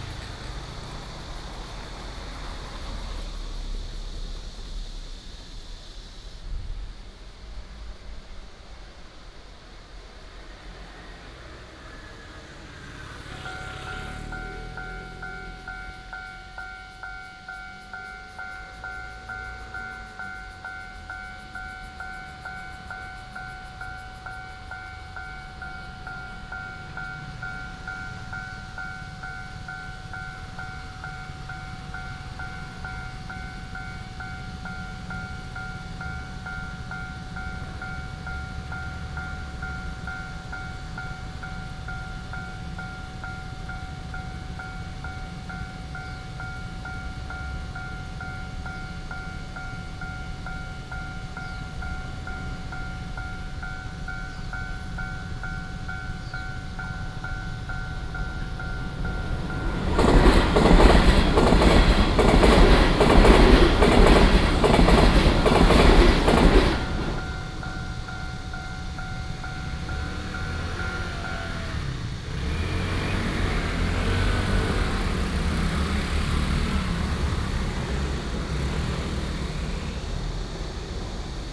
Narrow alley, Cicada cry, Traffic sound, The train runs through, Railroad Crossing
Zhongli District, Taoyuan City, Taiwan, 28 July 2017, ~06:00